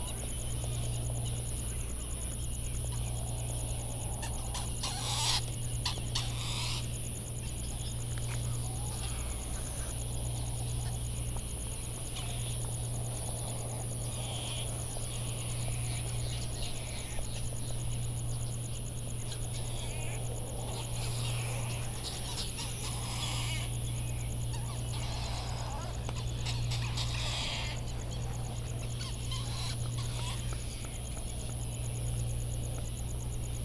United States Minor Outlying Islands - Laysan albatross and bonin petrel soundscape ...
Laysan albatross and Bonin petrel soundscape ... Sand Island ... Midway Atoll ... laysan calls and bill clapperings ... bonin calls and flight calls ... white tern calls ... open lavalier mics ... background noise from generators ...
13 March 2012, ~20:00